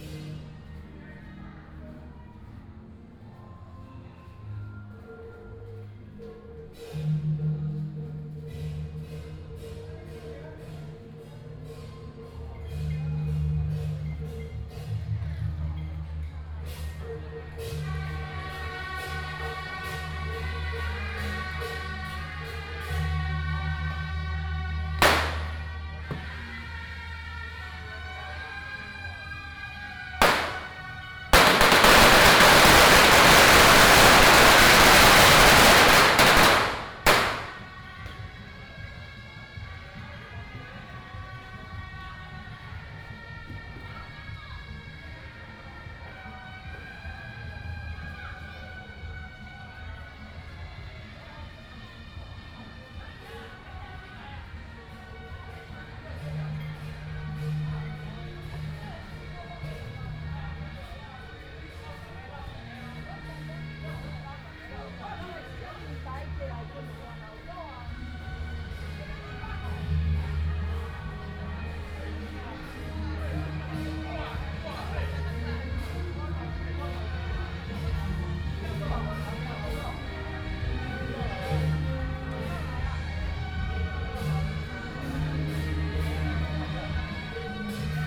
Daren St., Tamsui Dist., New Taipei City - walking in the Street
Traditional temple festivals, Firecrackers
New Taipei City, Taiwan